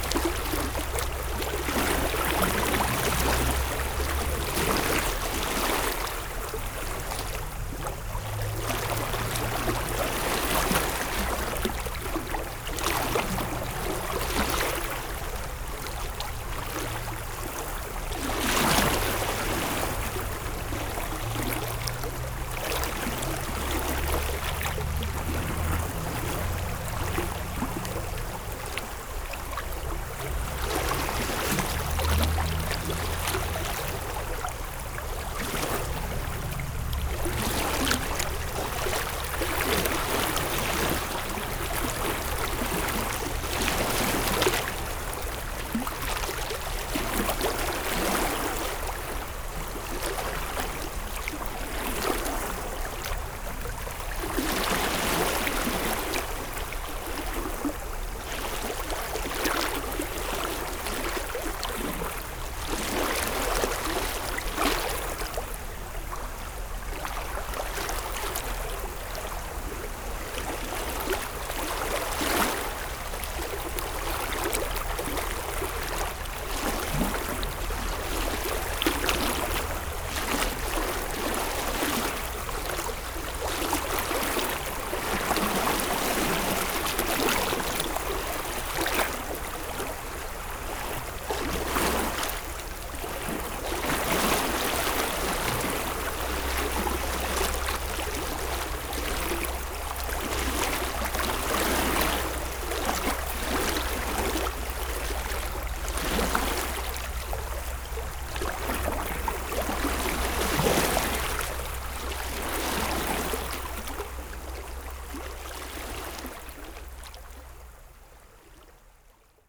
The city was flooded during the night, because of a very big storm in the city of Genappe. Normally on this place there's no river, but this morning there's water and waves.